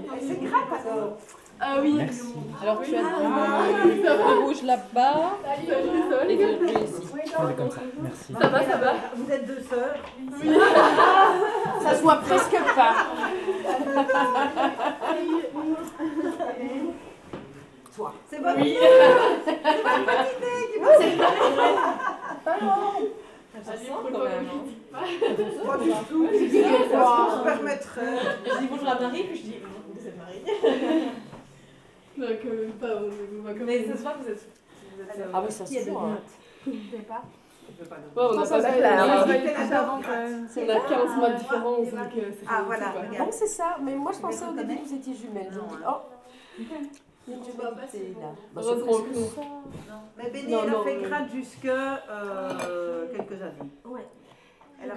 L'Hocaille, Ottignies-Louvain-la-Neuve, Belgique - KAP Le Levant
A KAP, in the Louvain-La-Neuve term is a "Kot-A-Projet". A kot is a house intended for students and projet means there's a project. In fact, KAP means students leaving there have a special projects, and there's hundred. We are here in the KAP Le Levant. Their project is to make and distribute bread. This bread is especially made by persons living with a mental handicap. This is a very-very-very friendly place.
On this day of activity, some students will learn to make bread. This recording is the short moment before workshop begins.